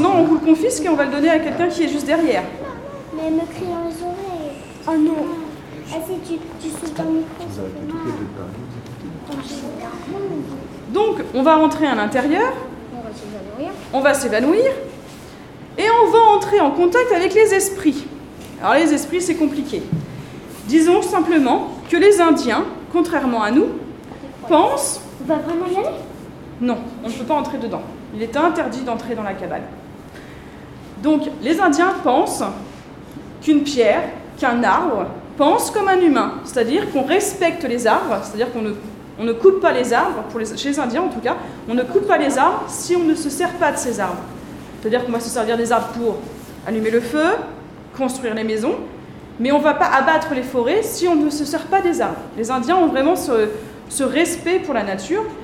Visite des Muséales de Tourouvre avec des enfants
Tourouvre, France, 12 February 2014, 10:50